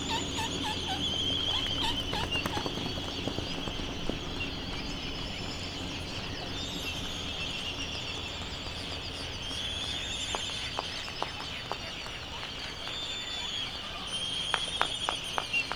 Sand Island ... Midway Atoll ... open lavalier mics ... bird calls ... laysan albatross ... white terns ... black noddy ... bonin petrels ... canaries ... background noise ...